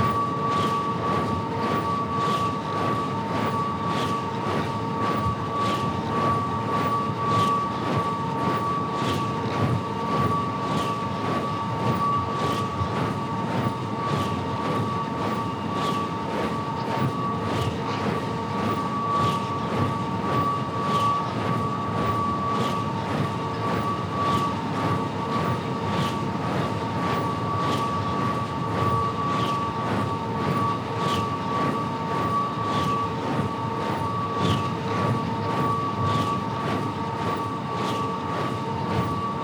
gale, wind, wind-turbine, creaks, bangs
Kirkby Ireleth, Cumbria, UK, 10 March 2010, 21:20